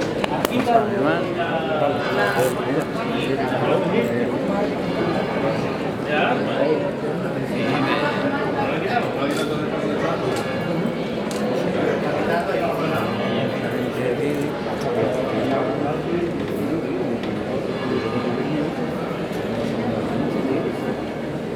Sevilla, Provinz Sevilla, Spanien - Sevilla - Basilica de la Macarena - white night
Inside the Basilica de la Macarena during the white night. The sound of people inside the Basilica talking.
international city sounds - topographic field recordings and social ambiences